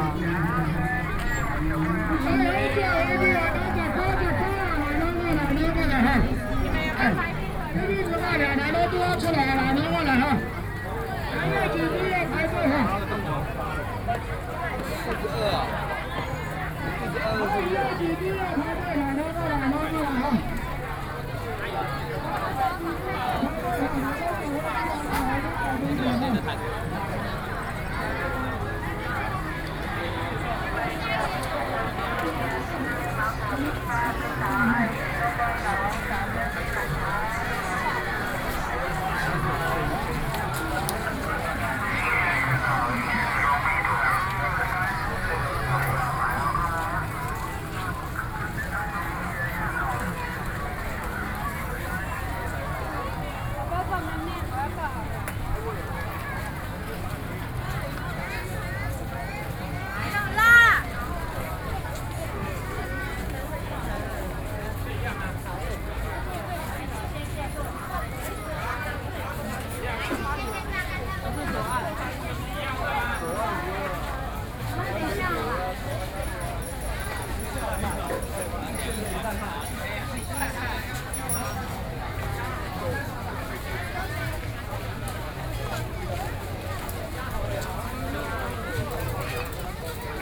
八里渡船頭, Bali Dist., New Taipei City - Holiday
Holiday at the seaside park, Various shops sound, Sound consoles
Binaural recordings, Sony PCM D50 +Soundman OKM II